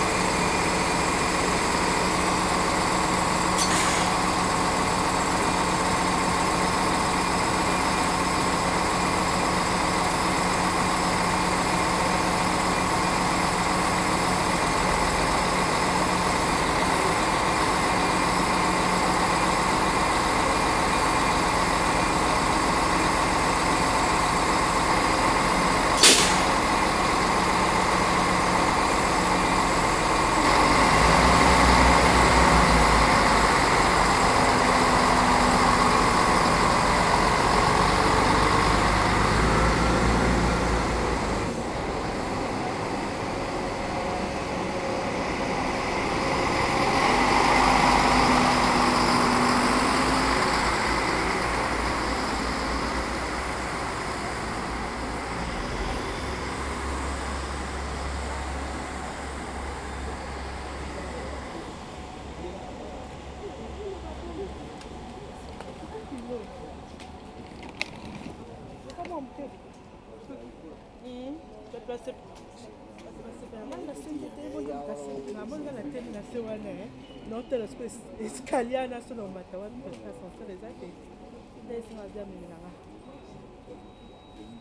Chartres, France - Chartres station
Chartres station - Several trains make their take in and off on the adjacent platforms 2B and 6 (do not try to understand). I recorded 3 trains. First, a train incoming Chartres station, terminus. After, it's a diesel engine connecting Brou and Courtalain villages. The locomotive makes a terrible noise. Then a conventional TER train ensures the connection between Chartres and Paris-Montparnasse stations. Along the platform, lively conversations take place.
0:00 - 3:00 - The quiet waiting room of the station.
3:00 - 4:10 - The main hall of the station.
4:10 - 5:30 - Incoming train from Le Mans city.
5:28 - PAPA !!!!!
7:30 - 10:45 - Outgoing train to Courtalain village.
10:45 to the end - Outgoing train to Paris.
16:38 - AYOU !